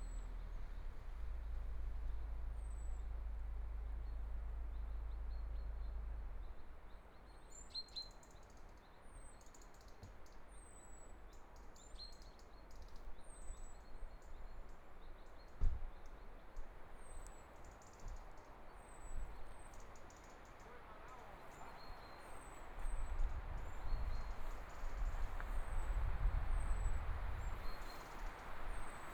Hohenkammer, 德國 Germany - In the woods
In the woods, Birdsong